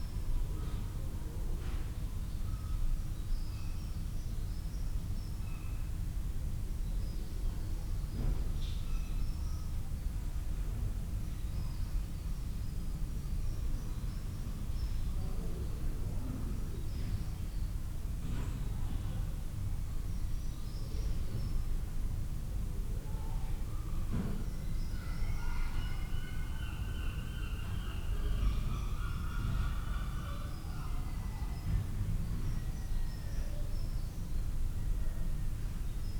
Baxtergate, Whitby, UK - inside ... St Ninians Church ... outside ... Whitby ...
inside ... St Ninians Church ... outside ... Whitby ... lavalier mics clipped to sandwich box ... bird calls ... herring gull ... dunnock ... background of voices and traffic ...